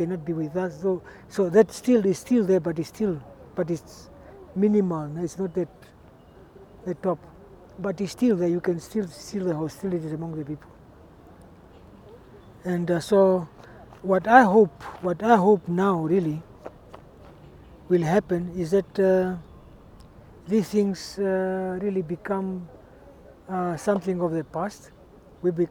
Zoo-Park, Windhoek, Namibia - A story of Katutura...
Joe lives in Katutura and describes it to me...
7 January 2009, 17:39